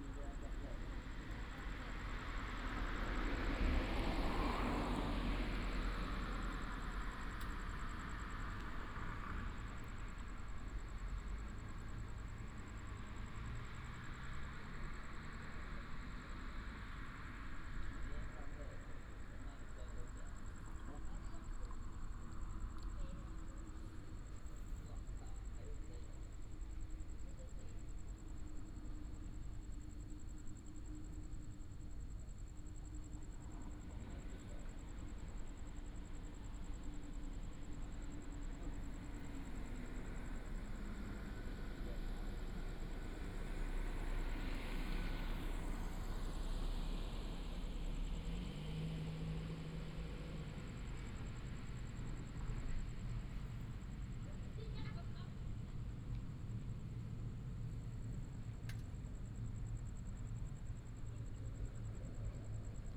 永安漁港, Xinwu Dist., Taoyuan City - Late at the fishing port
Late at the fishing port, traffic sound, Binaural recordings, Sony PCM D100+ Soundman OKM II